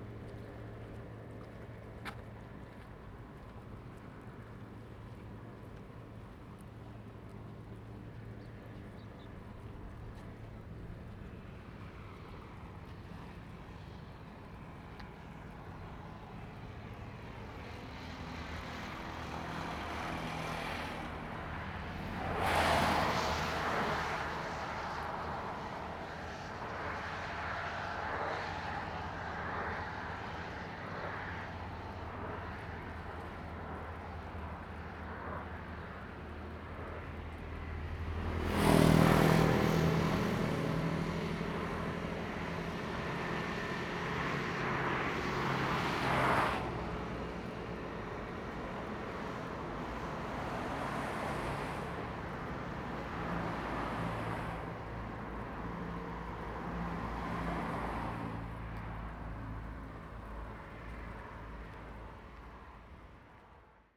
at the Bridge, Traffic Sound
Zoom H2n MS +XY